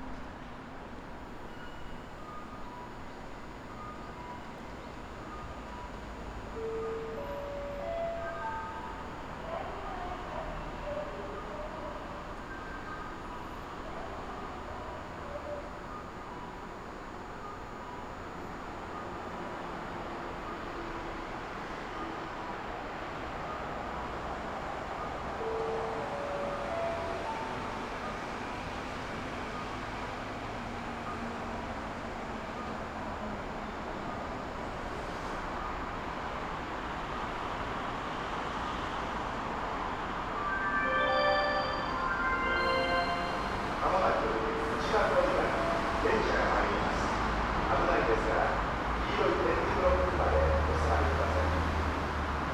Hanazono train station, Kyoto - broken halogen, fast train passing